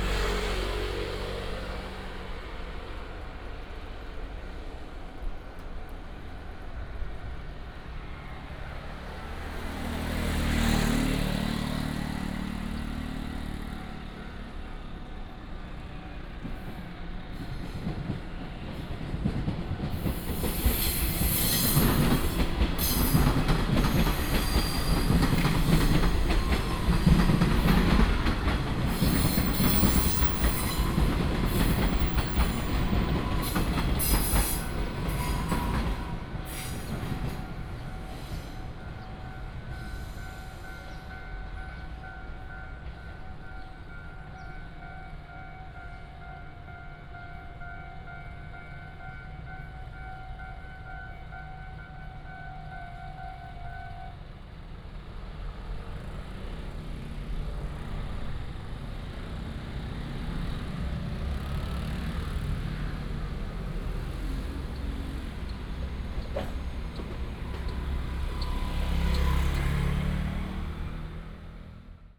Pinghe St., Dadu Dist. 台中市 - Walk along the tracks
Walk along the tracks, Factory sound, Traffic sound, train runs through